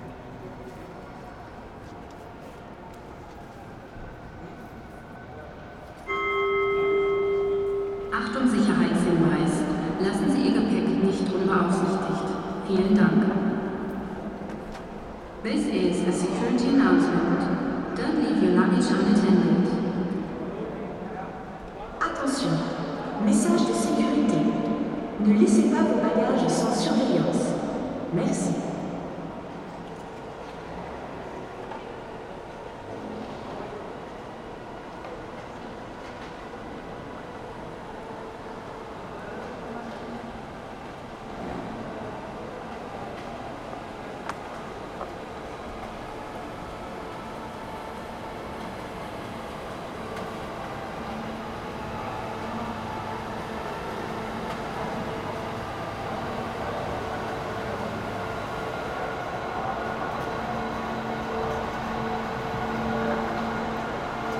Cologne main train station, entrance hall ambience at night, few people compared to daytimes, announcements, a cleaning vehicle
(Sony PCM D50, internal mics)